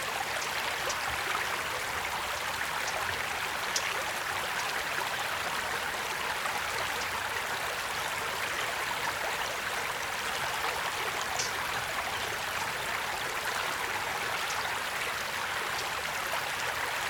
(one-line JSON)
{"date": "2014-02-17 14:00:00", "description": "Ribeira em Castelo Melhor, Portugal. Mapa Sonoro do Rio Douro. Small stream near Castelo Melhor, portugal. Douro River Sound Map", "latitude": "41.04", "longitude": "-7.05", "altitude": "138", "timezone": "Europe/Lisbon"}